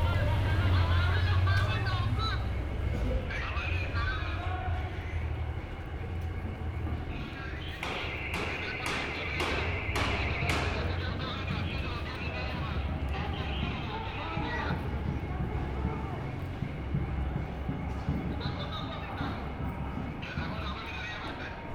Athens. Police radio - Demonstration 05.05.2010